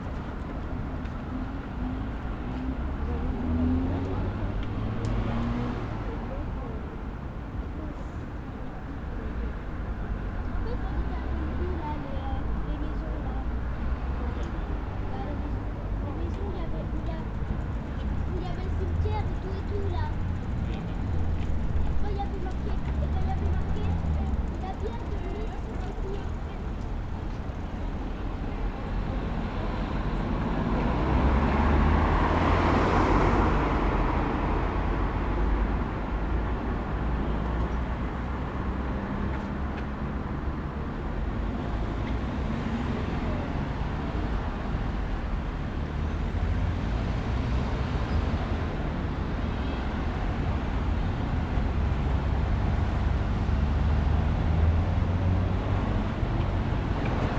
Pl. Hubert Dubedout, Grenoble, France - Dimanche matin
Près du pont traversant l'Isère, les bruits de la circulation.
11 September 2022, 09:50